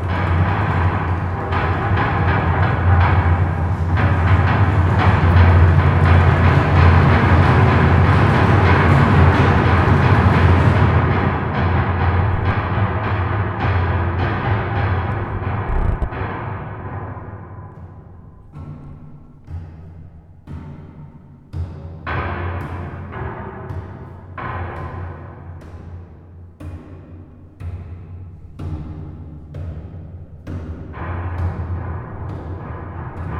Fort Cautley - Fort Cautley, June 2012
Auckland, New Zealand, 12 June